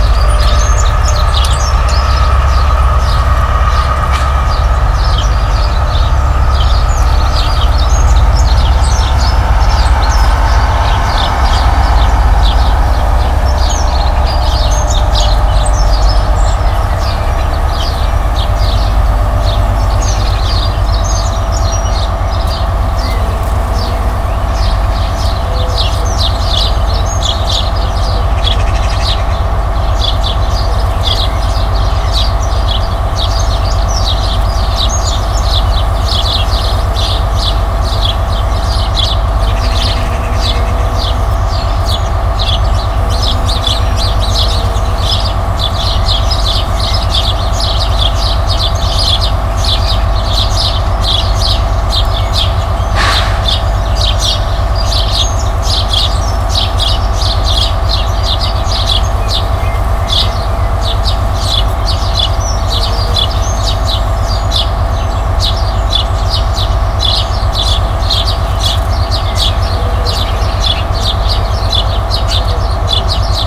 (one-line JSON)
{"title": "Fruitlands, Malvern, UK - Birds, Bees, 2 Trains and a Car", "date": "2017-07-16", "description": "Recorded outside the front window with Sound Devices 744 and a pair of DPA 4060 Omni Mics. Loads of Bee's buzzing in the bush and some birds. A train pulls up to wait by the tunnel through the Malvern Hills, a car drives past then another train.", "latitude": "52.09", "longitude": "-2.33", "altitude": "109", "timezone": "Europe/London"}